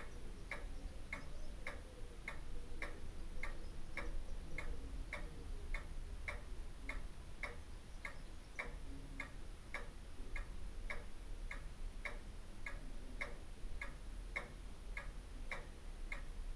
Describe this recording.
front room ambience ... recorded with olympus ls 14 integral mics ... ticking of a wall mounted pendulum clock ... my last visit to what was our family home ... my brother and myself had spent sometime together clearing the remnants ... here's to jack and babs ... no sadness in our memories of you ... bless you folks ...